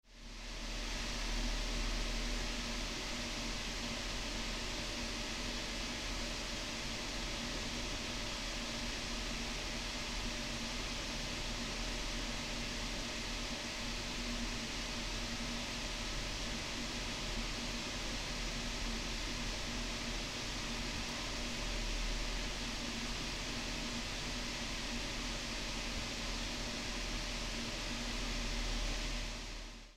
münzstr., exhaust
23.12.2008 00:15, dreary backyard, exhaust noise at night
Berlin, Germany, 23 December 2008